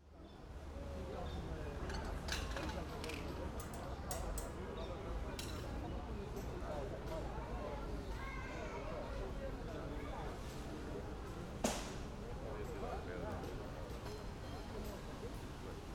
lisbon, jardim da estrela - park ambience
park ambience, nice summer evening.